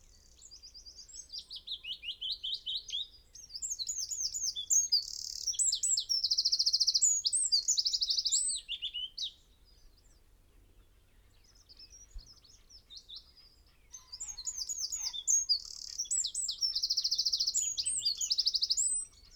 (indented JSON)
{
  "title": "Green Ln, Malton, UK - willow warblers ...",
  "date": "2020-04-11 07:14:00",
  "description": "willow warbler ... dpa 4060s to Zoom H5 clipped to twigs ... bird call ... song ... from ... carrion crow ... wood pigeon ... wren ... robin ... buzzard ... red-legged partridge ... dunnock ... blackbird ... wood pigeon ... birds had arrived in the last 24 hours ... upto five willow warblers in constant motion ...",
  "latitude": "54.12",
  "longitude": "-0.57",
  "altitude": "96",
  "timezone": "Europe/London"
}